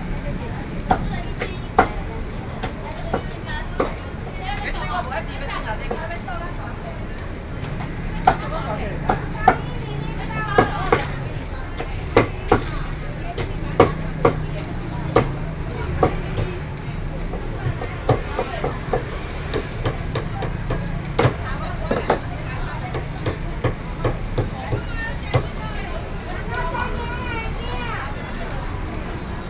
New Taipei City, Taiwan - Traditional markets
6 November 2012, ~11:00